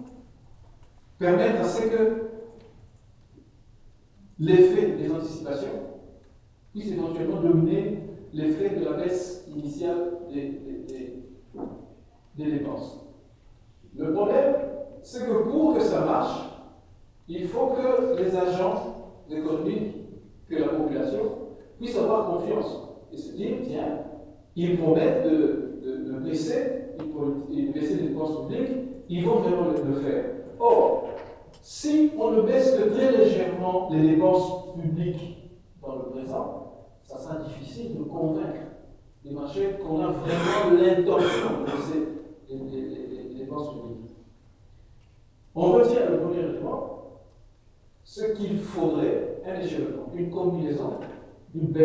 Ottignies-Louvain-la-Neuve, Belgique - A course of economy
A course of economy, in the Agora auditoire.
Ottignies-Louvain-la-Neuve, Belgium, 11 March 2016, 17:05